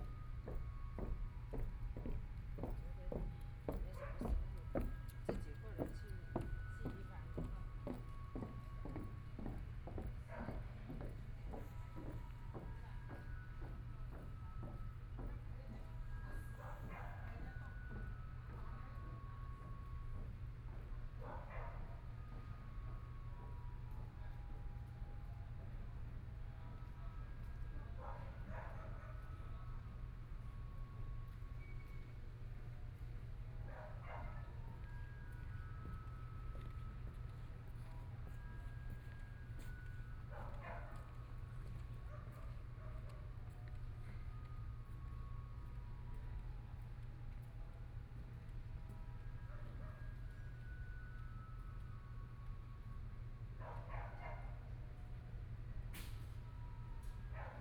Taitung City, Taiwan - soundwalk
Walking on abandoned railroad tracks, Currently pedestrian trails, Dogs barking, Garbage truck music, Bicycle Sound, People walking, Binaural recordings, Zoom H4n+ Soundman OKM II ( SoundMap2014016 -21)
Taitung City, Taitung County, Taiwan, 16 January 2014